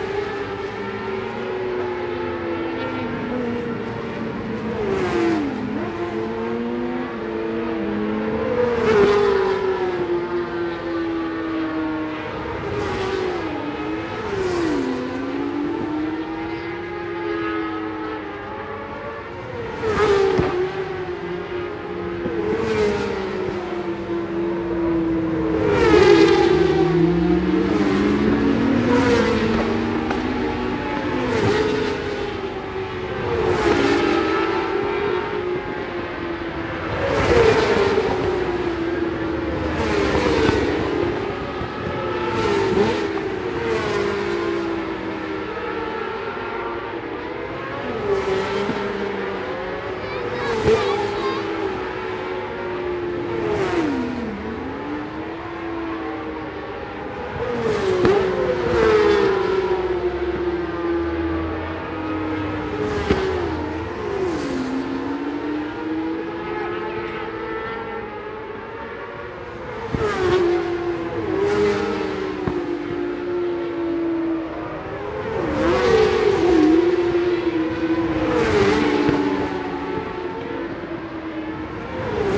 Scratchers Ln, West Kingsdown, Longfield, UK - BSB ... 600 FP2
British Superbikes ... 600 FP 2 ... one point stereo mic to minidisk ...